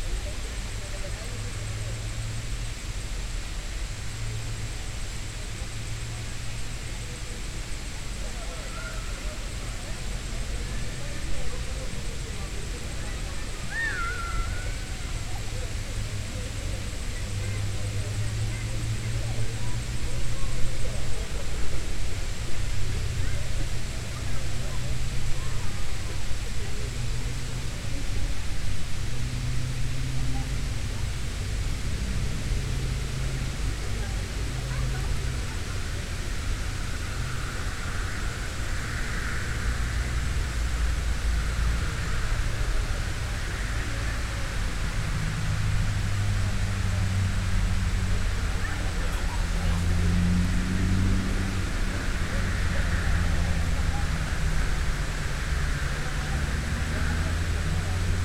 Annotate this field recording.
The ambience surrounding Lake Skannatati. Harriman State Park. Many sounds are heard: water running, visitors chatting, bees, cicadas, and road noise. [Tascam DR-100mkiii & Primo EM-272 omni mics]